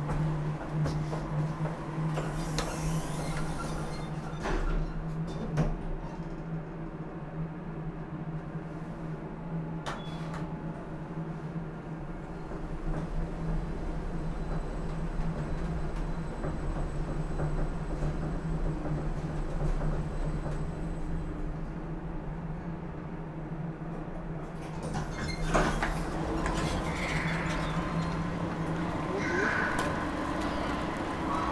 elevator from ground floor to 1st level
Berlin, Germany, 20 February 2011, 16:50